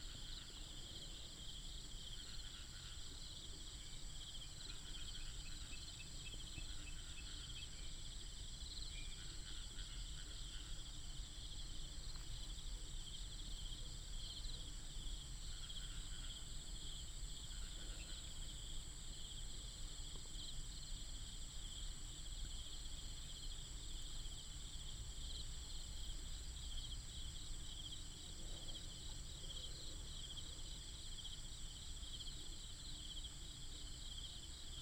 龍新路三水段, Longtan Dist., Taoyuan City - early morning
early morning, Next to the farm, Frogs, Insects, Binaural recordings, Sony PCM D100+ Soundman OKM II